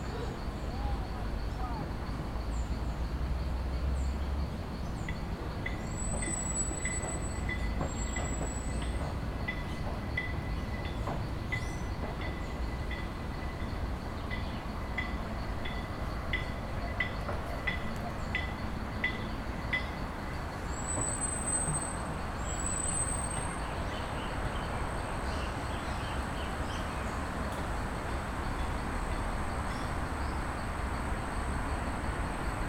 {
  "title": "Capibaribe River - Baobá - Baobá",
  "date": "2012-06-06 14:46:00",
  "description": "In front of the Capiberive River. Zoom H4n.",
  "latitude": "-8.04",
  "longitude": "-34.90",
  "altitude": "12",
  "timezone": "America/Recife"
}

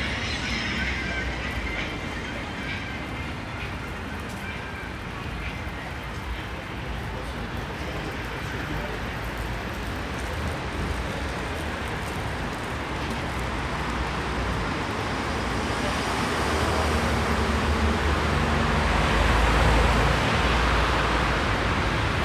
{
  "title": "Prinzenallee, Berlin, Deutschland - Prinzenallee, Berlin - in front of OKK (Organ of Critical Arts), traffic, passers-by",
  "date": "2012-10-13 14:49:00",
  "description": "Prinzenallee, Berlin - in front of OKK (Organ of Critical Arts), traffic, passers-by.\n[I used the Hi-MD-recorder Sony MZ-NH900 with external microphone Beyerdynamic MCE 82]",
  "latitude": "52.56",
  "longitude": "13.39",
  "altitude": "42",
  "timezone": "Europe/Berlin"
}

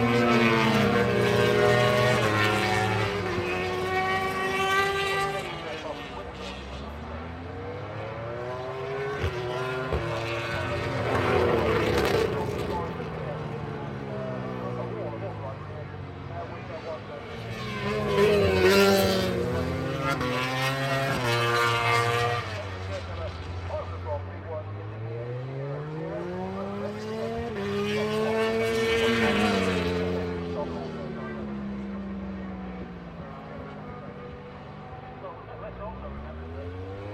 {
  "title": "Donington Park Circuit, Derby, United Kingdom - British Motorcycle Grand Prix ... MotoGP ... FP3 ...",
  "date": "2005-08-23 09:55:00",
  "description": "British Motorcycle Grand Prix ... MotoGP ... FP3 ... commentary ... Donington ... one point stereo mic to minidisk ...",
  "latitude": "52.83",
  "longitude": "-1.38",
  "altitude": "94",
  "timezone": "Europe/London"
}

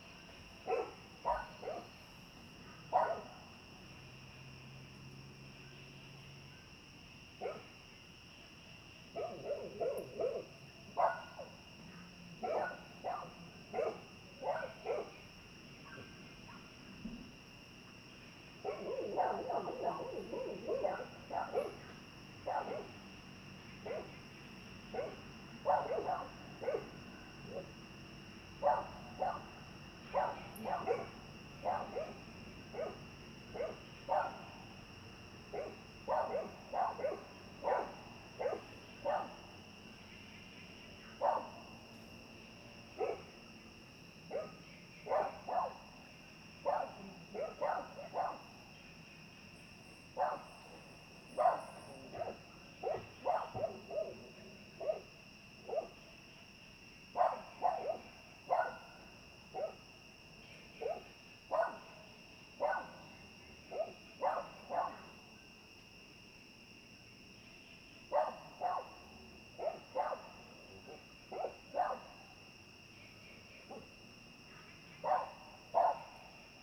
{
  "title": "TaoMi Li., 青蛙阿婆民宿 埔里鎮 - at the Hostel",
  "date": "2015-04-29 22:06:00",
  "description": "Dogs barking, Frogs chirping, at the Hostel, Sound of insects\nZoom H2n MS+XY",
  "latitude": "23.94",
  "longitude": "120.94",
  "altitude": "463",
  "timezone": "Asia/Taipei"
}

